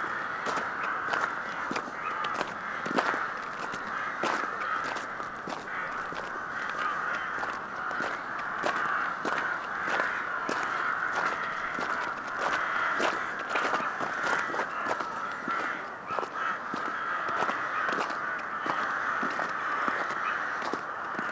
Lithuania, Utena, walk on the frozen lake with crows
city crows making their nests